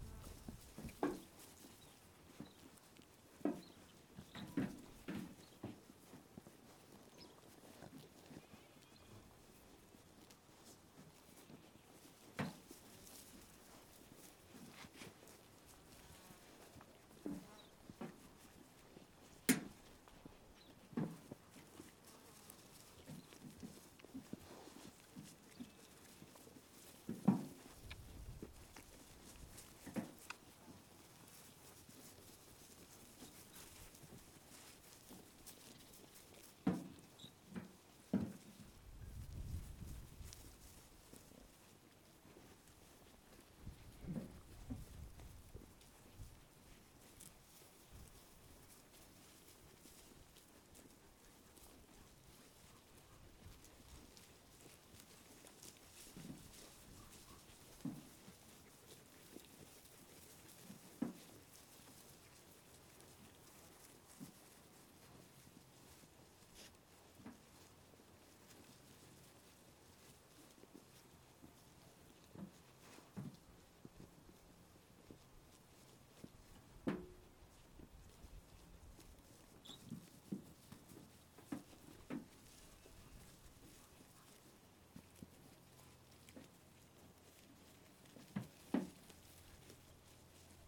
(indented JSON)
{
  "title": "North Hamarsland, Tingwall, Shetland Islands, UK - Listening to Pete Glanville's organic Shetland sheep entering their pen",
  "date": "2013-08-03 10:07:00",
  "description": "This is the sound of Pete Glanville bringing his small flock of Shetland sheep into their pen, and giving them some supplementary organic feed. You can hear in the recording the sheep munching their food and occasionally kicking the food trough, Pete talking to the sheep, and one of the sheep greedily eating its food so fast that it makes itself cough and splutter! It was a beautiful, sunny day when we made this recording, and Pete helped me immensely by explaining the schedule for the sheep, so that I could try to fit my recordings around their daily routine. Every day they come down to have their feed at around 10am, so I arrived just in time to record this. They are beautiful small short-tailed sheep, in many different colours, and Pete is one of several farmers who are pursuing an organic route for the rearing and processing of Shetland wool. Recorded with Audio Technica BP4029 and FOSTEX FR-2LE.",
  "latitude": "60.22",
  "longitude": "-1.21",
  "timezone": "Europe/London"
}